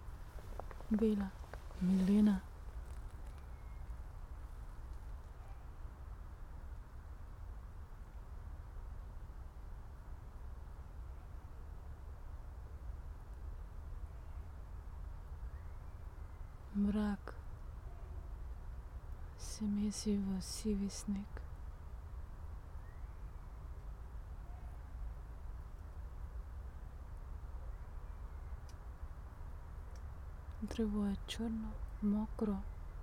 tree crown poems, Piramida - before dark
quiet atmosphere before dark, spoken words, bells
Maribor, Slovenia, 22 January 2013, 17:00